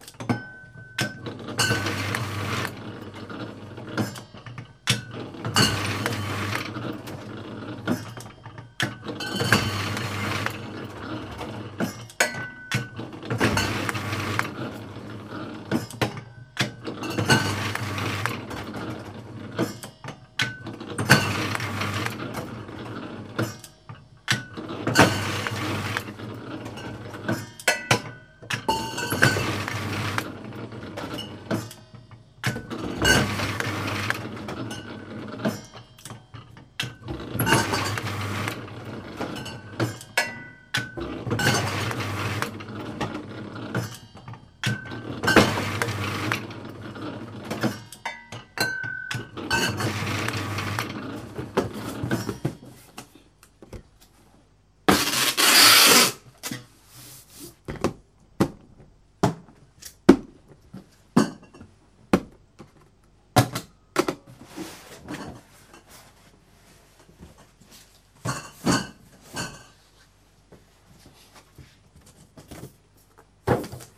putting labels on bottles and packing them in boxes